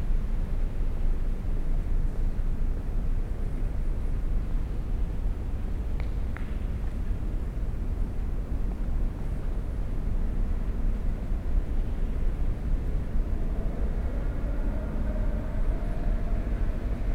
Beyoğlu, Turkey - Antrepo. Walk to roof
Climb up a metal staircase to the roof of this harbour warehouse. Sounds of mosques, seagulls, ships.
recorded binaurally - DPA mics, DAT tape.
Marmara Bölgesi, Türkiye, 9 May 2007